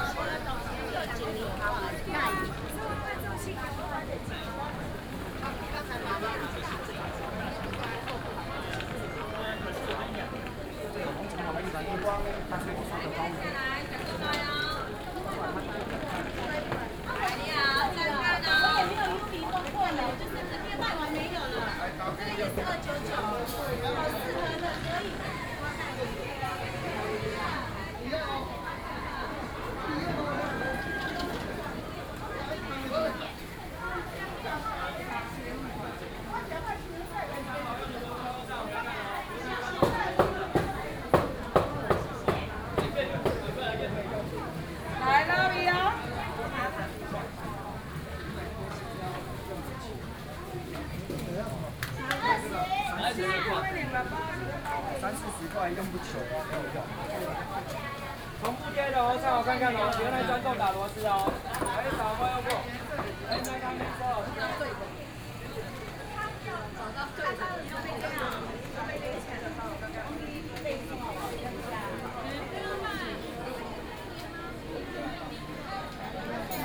清水市場, New Taipei City - Walking through the traditional market
Walking through the traditional market, Very narrow alley
Tamsui District, New Taipei City, Taiwan, July 2015